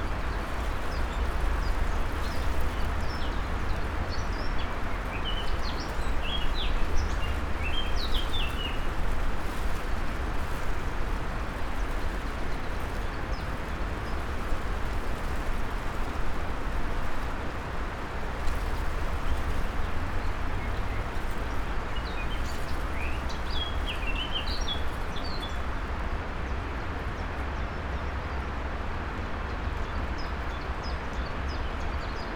{"title": "little island, river drava, melje - strong river flow from afar, dry maple and poplar leaves, wind", "date": "2014-03-16 12:20:00", "latitude": "46.56", "longitude": "15.68", "timezone": "Europe/Ljubljana"}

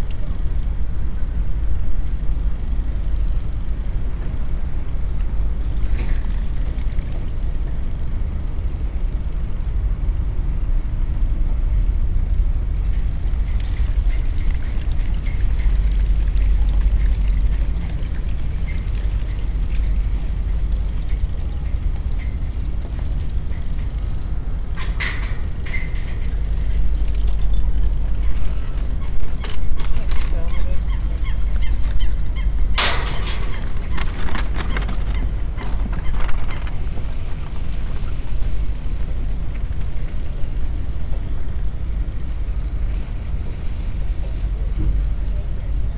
{"title": "Centraal Station, Amsterdam, Netherlands - (300 BI) Marine atmosphere", "date": "2017-09-17 15:10:00", "description": "Binaural recording of a marine / industrial atmosphere.\nRecorded with Soundman OKM on Sony PCM D100", "latitude": "52.38", "longitude": "4.90", "altitude": "3", "timezone": "Europe/Amsterdam"}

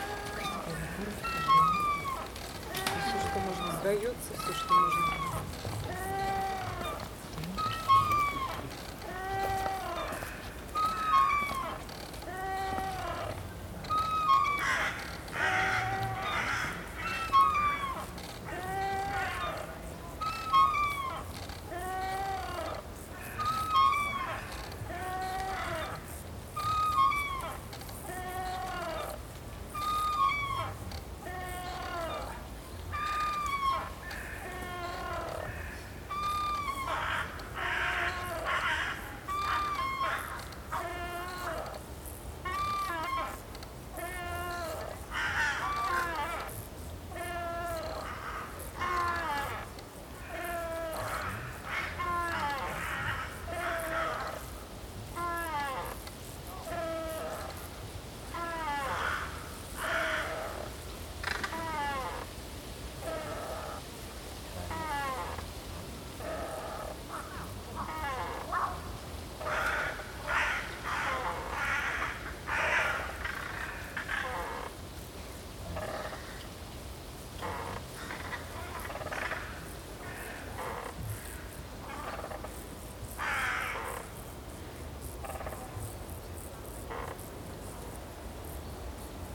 {"title": "Neringos sav., Lithuania - Wooden Swing", "date": "2016-07-27 17:43:00", "description": "Recordist: Saso Puckovski. The screeching of a wooden swing in use. Bird sounds and tourists can be heard. Recorded with ZOOM H2N Handy Recorder.", "latitude": "55.30", "longitude": "21.00", "altitude": "4", "timezone": "Europe/Vilnius"}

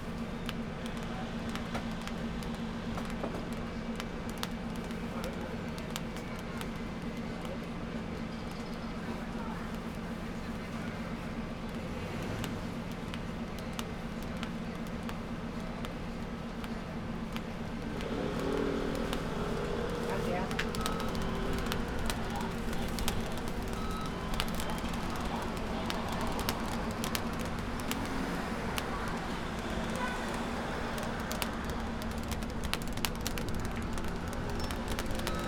{"title": "Calle Jose Hernandez Alfonso, Santa Cruz de Tenerife - entrance to a store", "date": "2016-09-09 14:36:00", "description": "Recorded at an entrance to a store. Right at the door there was a small fan, with some plastic strips attached to it. It made some interesting crackling sounds that you can hear over the entire recording. The detuned bell is also part of the store, triggered as customers went in and out. Conversations of the customers and passersby. Some street noise in the background. This is a rather busy part of the city. Recorder was placed right at the fan. (sony d50)", "latitude": "28.46", "longitude": "-16.25", "altitude": "18", "timezone": "Atlantic/Canary"}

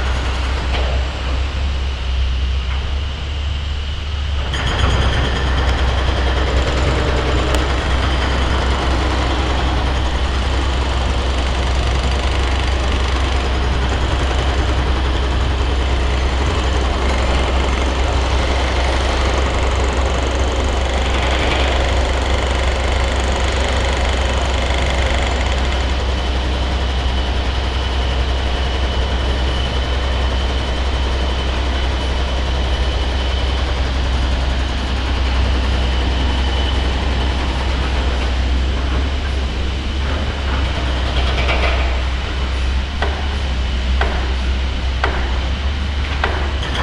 {"title": "EC-1 młoty 3", "date": "2011-11-17 22:59:00", "description": "EC-1 Lodz", "latitude": "51.77", "longitude": "19.47", "altitude": "219", "timezone": "Europe/Warsaw"}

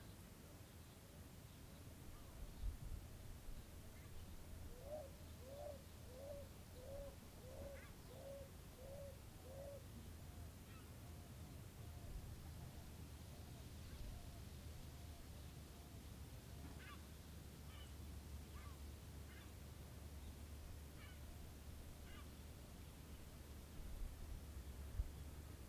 {"title": "La Higuera, Región de Coquimbo, Chile - Choros town", "date": "2017-02-23 13:16:00", "description": "Choros is a remote town in the north of Chile with desert coast climate. The groundwater and sea breeze makes possible to cultivate oolives, fruits plants and the existence of diverse fauna.", "latitude": "-29.29", "longitude": "-71.31", "altitude": "53", "timezone": "America/Santiago"}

